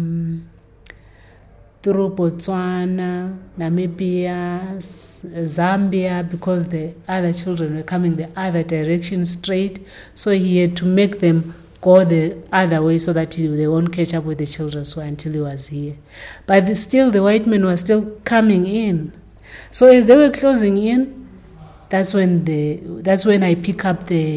Amakhosi Cultural Centre, Makokoba, Bulawayo, Zimbabwe - Thembi Ngwabi talks history in her new production…
I had been witnessing Thembi training a group of young dancers upstairs for a while; now we are in Thembi’s office, and the light is fading quickly outside. Somewhere in the emptying building, you can still hear someone practicing, singing… while Thembi beautifully relates many of her experiences as a women artist. Here she describes to me her new production and especially the history it relates…
29 October 2012